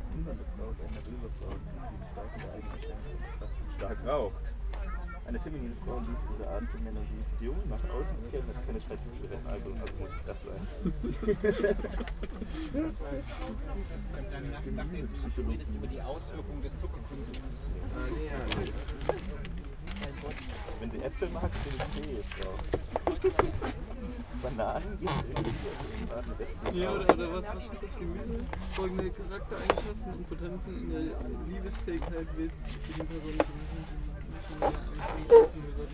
Tempelhofer Park, Berlin, Deutschland - temporary library in an old phone booth
a temporary library installation in an old phone booth, a man reads from a strange book about the human character.
(Sony PCM D50, DPA4060)
8 November 2014, 15:55